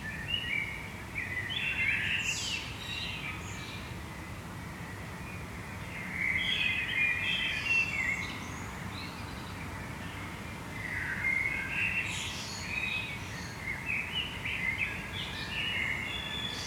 Stadtwald, Essen, Deutschland - essen, amselstrasse, early morning bird scape
Frühmorgens auf einem Balkon. Die Klänge der erwachenden Vögel und im Hintergrund der Klang der naheliegenden Autobahn. Ausschnitt einer längeren Aufnahme freundlicherweise für das Projekt Stadtklang//:: Hörorte zur Verfügung gestellt von Hendrik K.G. Sigl
On a balcony of a private house in the early morning. The sounds oof the awakening birds and the traffic from the nearby highway.
Projekt - Stadtklang//: Hörorte - topographic field recordings and social ambiences